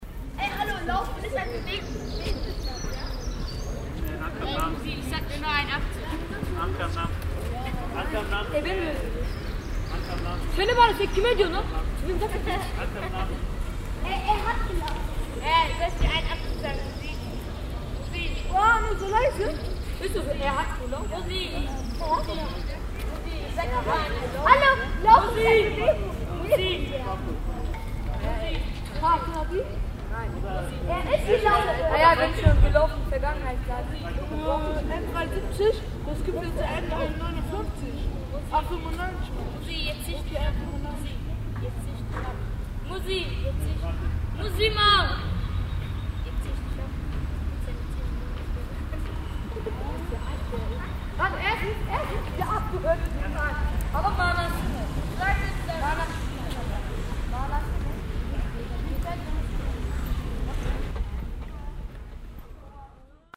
monheim, zentrum, jugendliche 02
project: social ambiences/ listen to the people - in & outdoor nearfield recordings